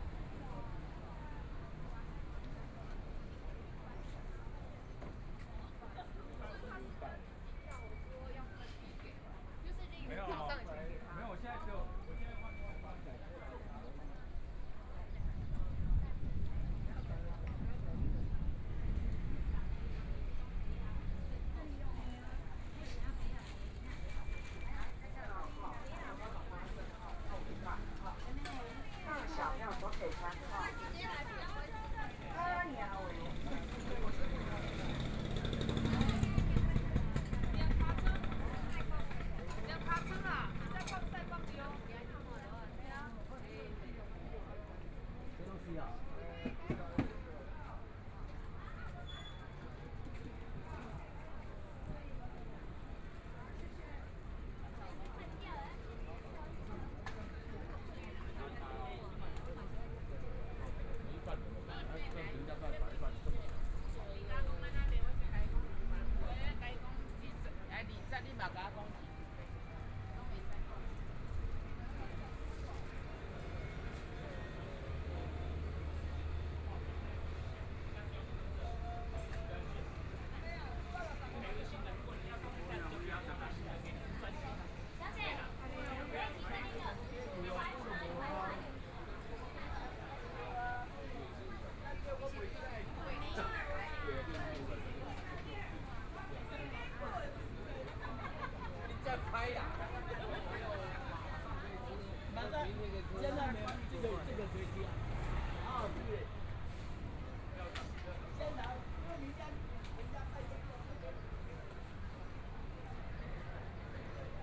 集英里, Zhongshan Dist. - Walking through the market
Walking through the market, Traffic Sound, Binaural recordings, Zoom H4n+ Soundman OKM II